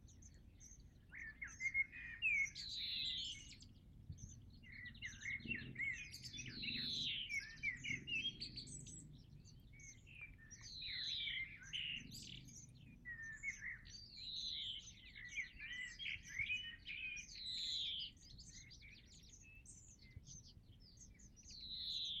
Dessau-Roßlau, Deutschland - Schrebergartenanlage | allotments
Schrebergarten - Piepsen aus einen Nistkasten, Vogelgesang, Kirchenglocken, Motarradknattern vom Weitem | Allotment - peeps out a nest box, bird singing, ringing church bells, far away rattle of a motorcycle
Sachsen-Anhalt, Deutschland